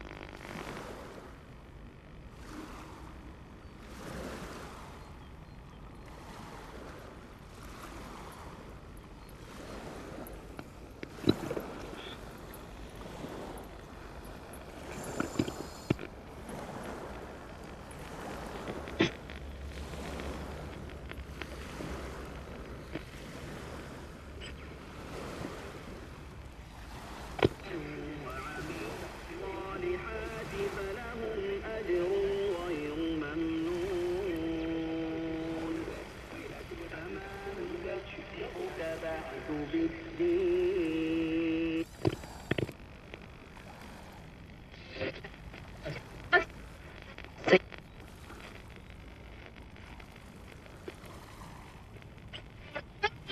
Radios on the Vltava beach
Radios on the Smíchov beach near Železniční most are being re-tuned in realtime according to sounds of Vltava - Moldau. Underwater sonic landscapes and waves of local boats turn potentiometers of radios. Small radio speakers bring to the river valley voices from very far away…